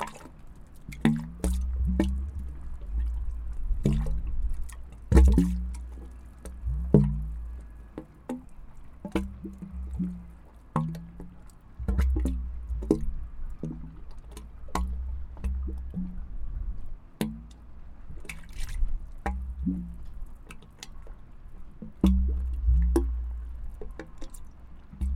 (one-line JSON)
{"title": "Kulgade, Struer, Danmark - Sounds from a drainage pipe, Struer Harbor", "date": "2022-09-29 11:30:00", "description": "Recorded with rode NT-SF1 Ambisonic Microphone, close up. Øivind Weingaarde", "latitude": "56.49", "longitude": "8.60", "timezone": "Europe/Copenhagen"}